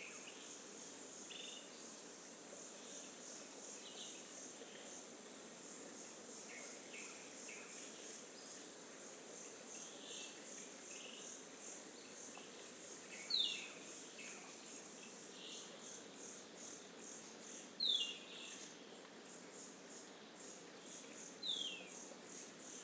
Parque da Cantareira - Núcleo do Engordador - Trilha do Macucu - i
register of activity
19 December 2016, 13:05